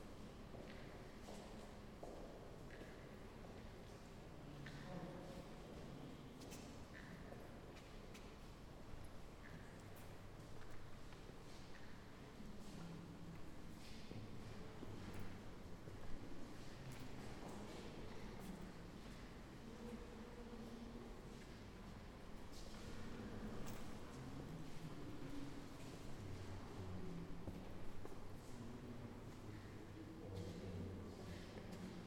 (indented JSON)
{
  "title": "Rijksmuseum De Weteringschans, Amsterdam, Nizozemsko - walking along the Masters",
  "date": "2015-02-28 13:20:00",
  "latitude": "52.36",
  "longitude": "4.89",
  "altitude": "12",
  "timezone": "Europe/Amsterdam"
}